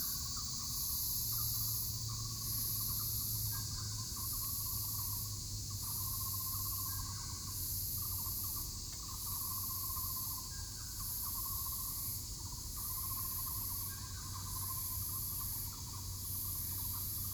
Sec., Balian Rd., Xizhi Dist. - Cicadas and Birds
Cicadas and the sound of insects, Bird calls
Sony PCD D50
New Taipei City, Taiwan, 16 July